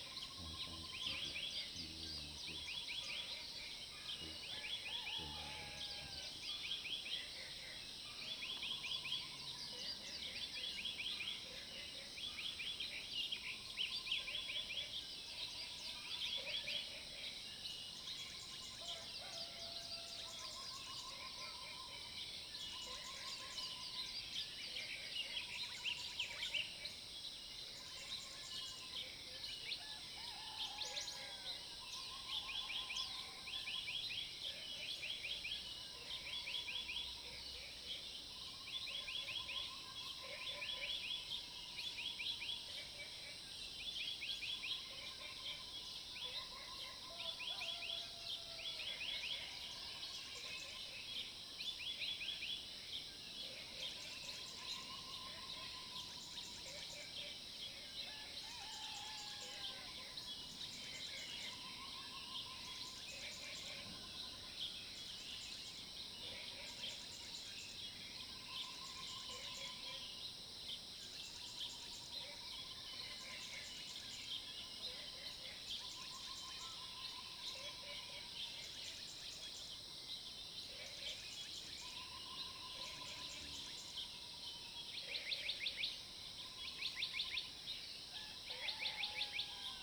種瓜路4-2號, TaoMi Li, Puli Township - Early morning
Crowing sounds, Bird calls, Early morning
Zoom H2n MS+XY